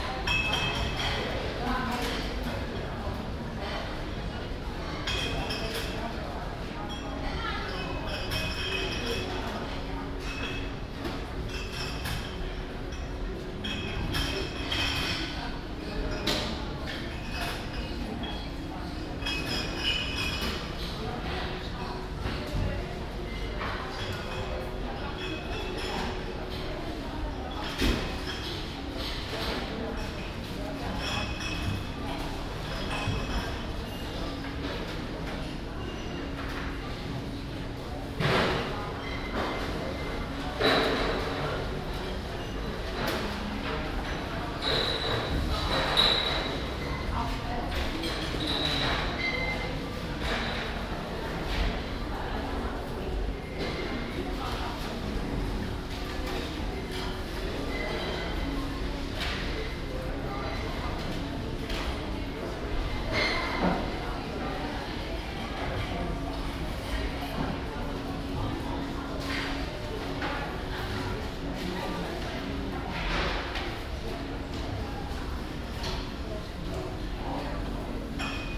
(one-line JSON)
{"title": "Eckernförder Str., Kiel, Deutschland - Bakery café in a supermarket", "date": "2017-09-11 14:00:00", "description": "Café of a bakery shop inside a supermarket, chatting and walking people, clattering dishes, beeps from the cash registers and Muzak, from the acoustic point of view not a place to feel comfortable; Binaural recording, Zoom F4 recorder, Soundman OKM II Klassik microphone", "latitude": "54.35", "longitude": "10.09", "altitude": "20", "timezone": "Europe/Berlin"}